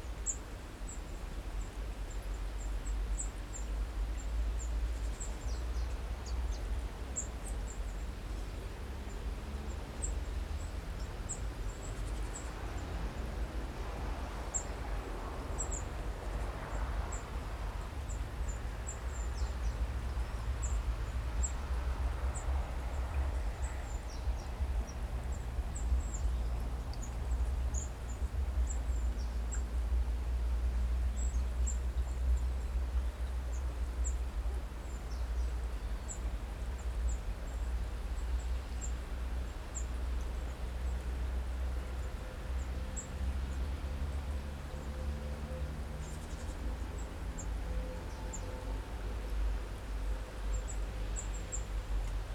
Lithuania, Utena, city hum, birds, water
19 January, 15:20